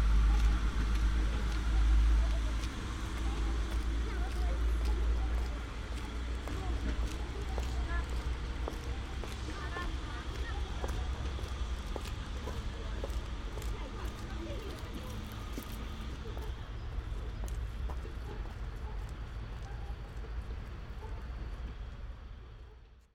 small diesel train arriving at the platform in Skoki
Gmina Skoki, Poland, 29 March